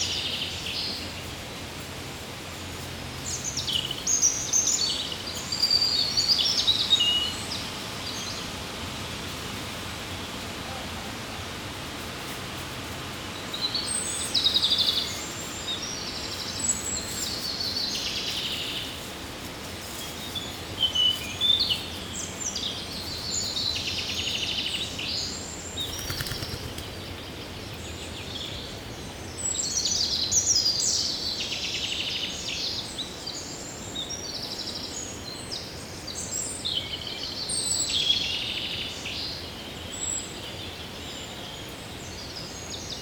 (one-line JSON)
{"title": "Chaumont-Gistoux, Belgique - In the woods", "date": "2017-05-27 10:55:00", "description": "European robin singing, and a Common Wood Pigeon rummages into the dead leaves.", "latitude": "50.67", "longitude": "4.70", "altitude": "131", "timezone": "Europe/Brussels"}